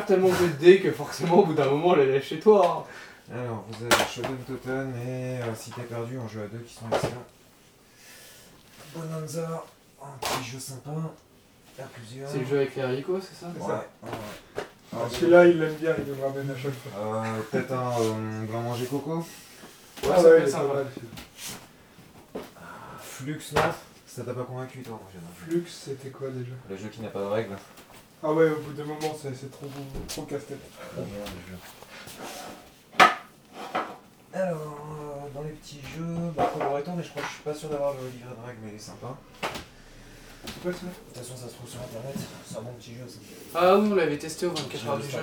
Sens, France - My brother home
We are in my brother home, a charming apartment in a longhouse. On this evening, some friends went, they are discussing about Role-Playing ; my brother lend some games. I think it's a representative sound of this place.
28 July